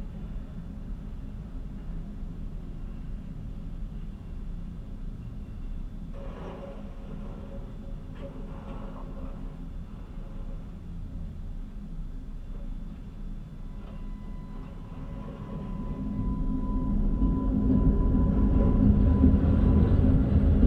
tram sounds from inside hotel, Riga, Latvia - tram sounds from inside hotel
tram sounds from inside hotel at 5am